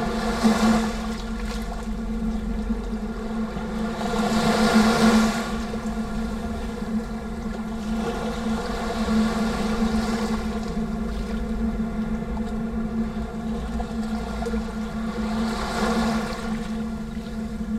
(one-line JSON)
{"title": "Galatas, Crete, in the tube", "date": "2019-05-02 14:20:00", "description": "a tube for rainwater in concrete", "latitude": "35.51", "longitude": "23.96", "altitude": "3", "timezone": "Europe/Athens"}